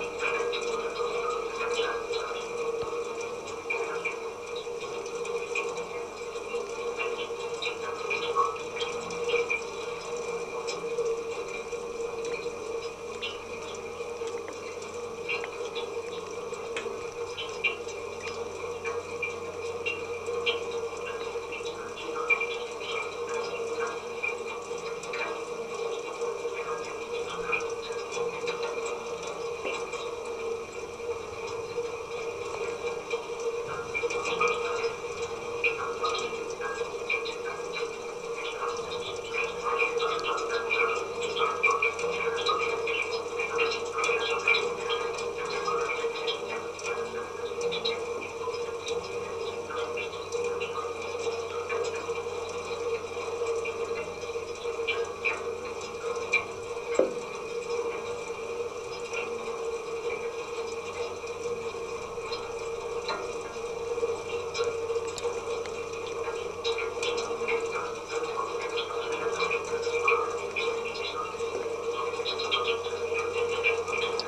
Urban, Slovenia - rain on church lightning rod
rain falls and gutters resonate through a lighting rod attached to a small church on the hill in urban. recorded with contact microphones.